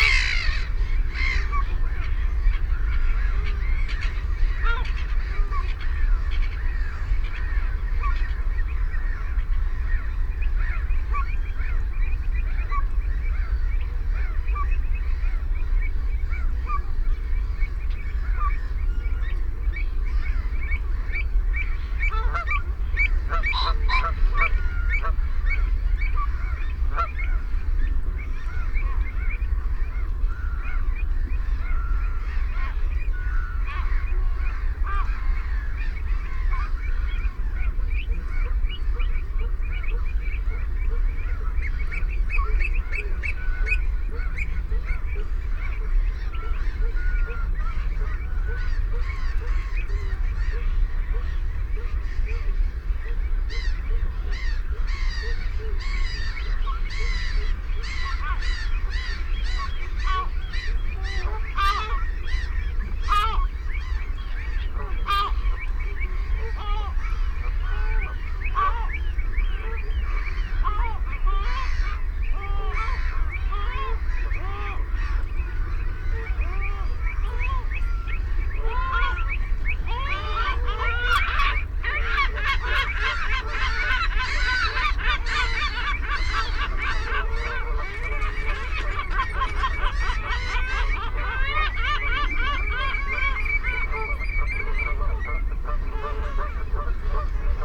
Stone Cottages, Woodbridge, UK - Belpers Lagoon soundscape ... late evening ...
Belpers Lagoon soundscape ... late evening ... RSPB Havergate Island ... fixed parabolic to minidisk ... calls from ... herring gull ... black-headed gull ... sandwich tern ... avocet ... redshank ... oystercatcher ... dunlin ... snipe ... ringed plover ... mallard ... shelduck ... canada goose ... background noise from shipping and planes ...
21 April 2005, ~20:00